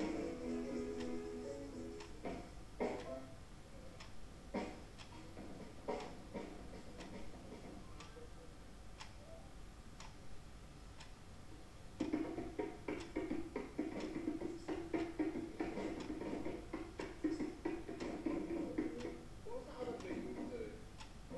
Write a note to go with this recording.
godard´s one plus one vs. das büro, the city, the country & me: september 2, 2010